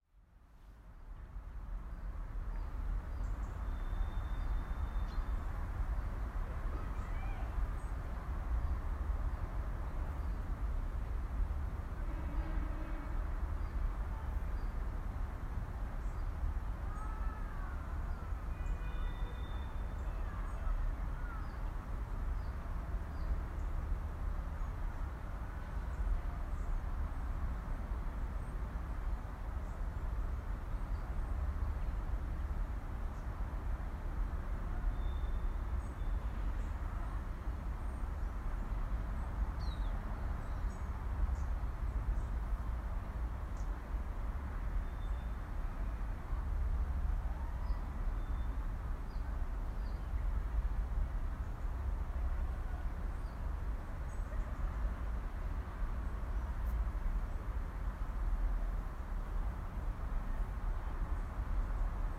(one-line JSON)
{"title": "Gravias, Ag. Paraskevi, Greece - Deree Campus, outside of Chapel", "date": "2021-11-01 15:30:00", "description": "Recording of campus for course project. Sound is unedited except for fade in and fade out.", "latitude": "38.00", "longitude": "23.83", "altitude": "286", "timezone": "Europe/Athens"}